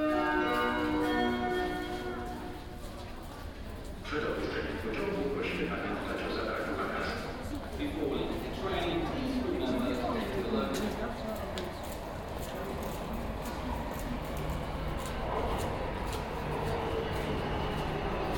Metro Służew, Warsaw, Poland - (97) Metro ride from Służew to Wilanowska station
Binaural recording of short metro ride in Warsaw.
Recorded with Soundman OKM + Sony D100
Sound posted by Katarzyna Trzeciak